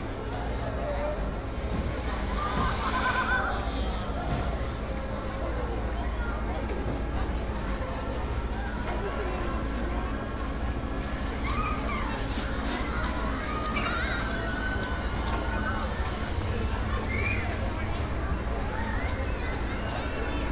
Binaural recording of Les Machines de l'île.
recorded with Soundman OKM + Sony D100
sound posted by Katarzyna Trzeciak